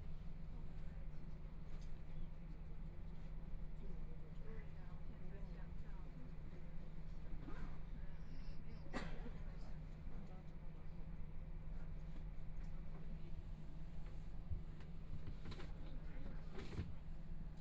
Ji'an Township, Hualien County - After the accident
Taroko Express, Interior of the train, to Hualien Station, Binaural recordings, Zoom H4n+ Soundman OKM II
Hualien County, Taiwan